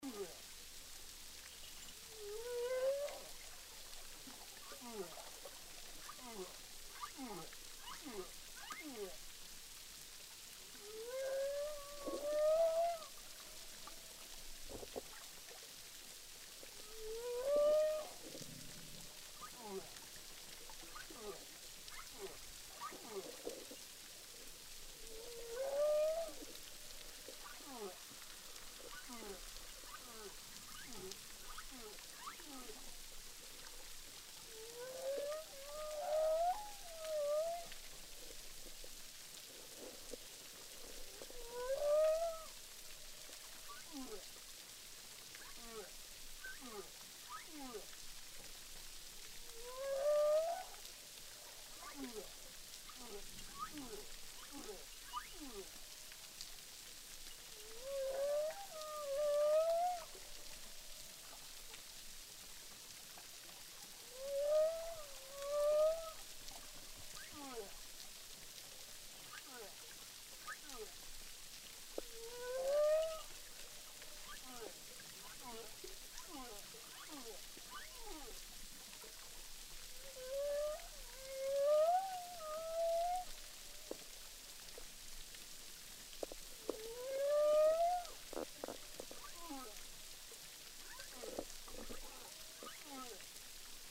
humpback whales, boucan canot, ile de la reunion
enregistré avec un hydrophone DPA lors du tournage SIGNATURE
2 September 2010